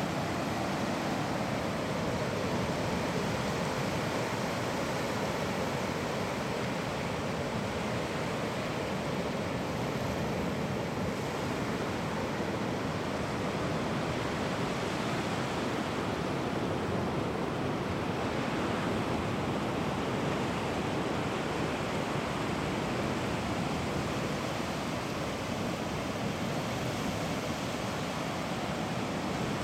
Netherlands, Ameland - Ameland Beach (paal 22)
calm sea, no wind